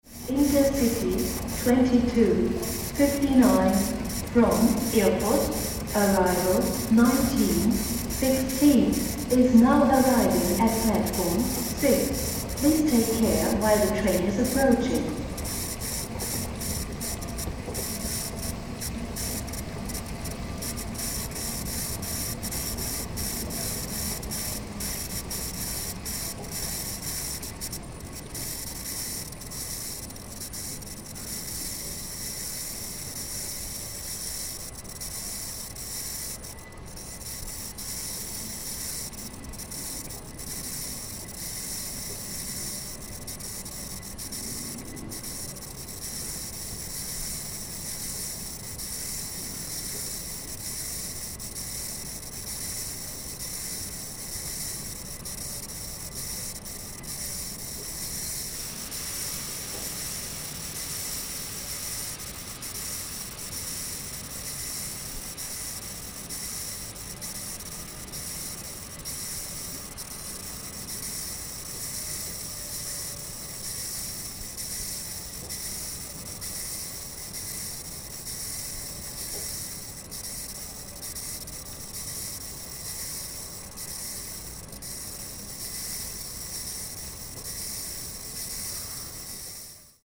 lehrter bahnhof (hbf): aufzugansage, untergeschoss, gleis - rolltreppe / escalator
19.02.2009 19:15 moving escalator, little noise caused by mechanical friction, changing speed